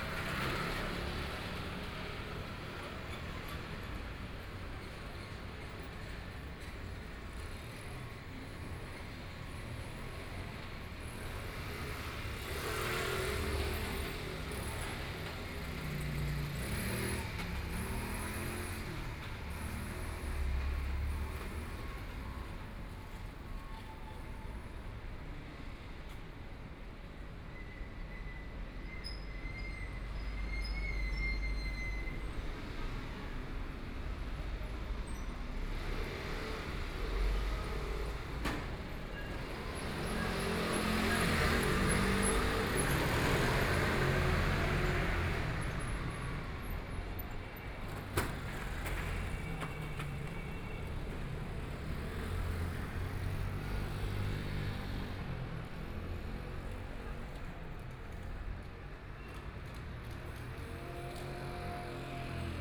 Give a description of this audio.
Traffic Sound, Old small streets, Narrow channel, Binaural recordings, Zoom H6+ Soundman OKM II